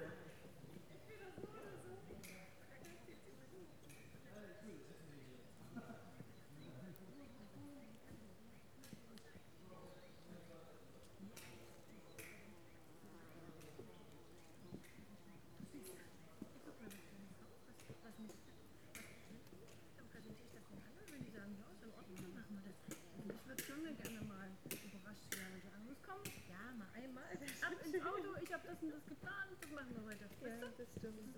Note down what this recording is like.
Rathen, Elbe Sandstone Mountains, Saxon Switzerland (Sächsische Schweiz), in the forest below Bastei rocks. A singer in the for forest, walkers and wanderes passing by, (Sony PCM D50)